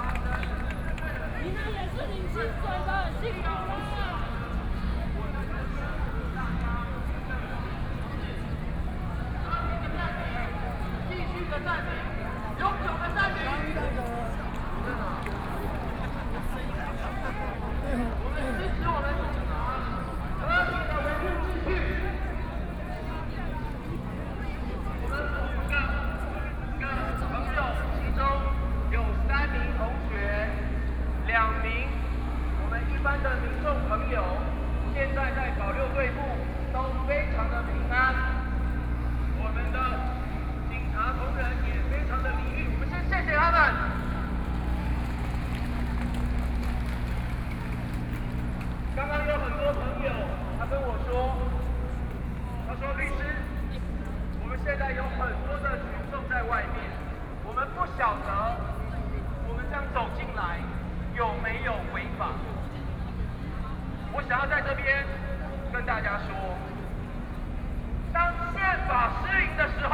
中正區梅花里, Taipei City - occupied
Student activism, Walking through the site in protest, People and students occupied the Executive Yuan
23 March, ~10:00